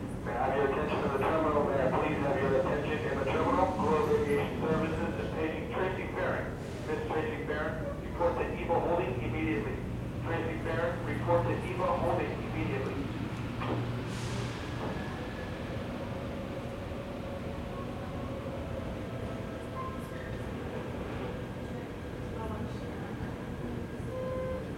South Satellite, SeaTac Airport - SeaTac #1
Seattle-Tacoma International Airport, downstairs at the entrance to the South Satellite shuttle subway. I never liked the way the Muzak keeps seeping in.